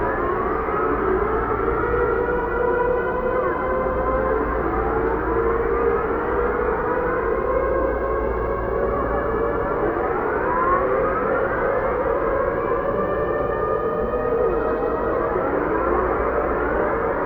Sirens City Test, Nov 2009